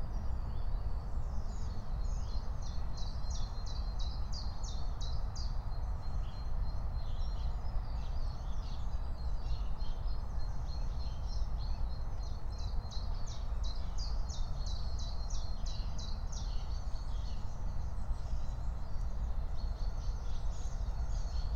06:00 Berlin Buch, Lietzengraben - wetland ambience
Deutschland, 14 April 2022